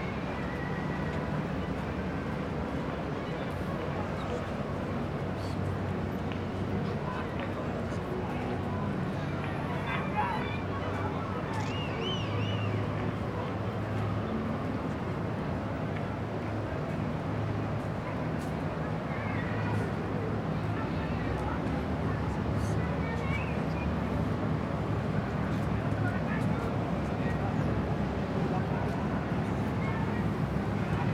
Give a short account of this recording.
recorded on a hill. many sounds carried over water from the other riverbank. fans of a visiting soccer team singing and cheering in a restaurant a few hundred meters away. boats cruising the river. sightseeing helicopter buzzing over city.